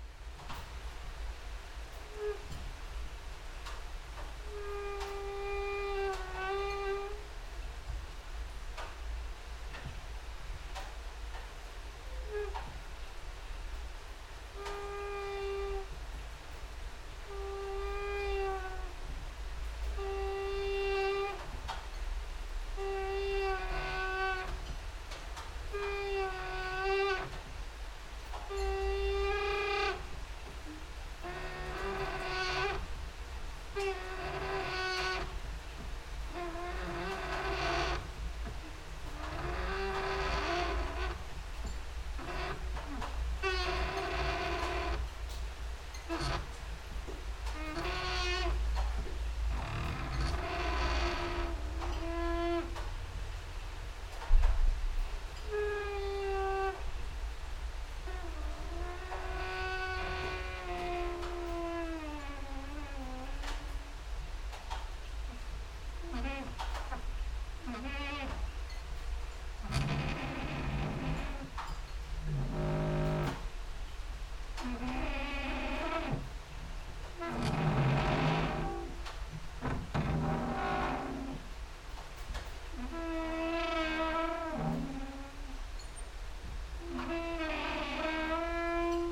no cricket at that day ... rain and drops outside, exercising creaking with wooden doors inside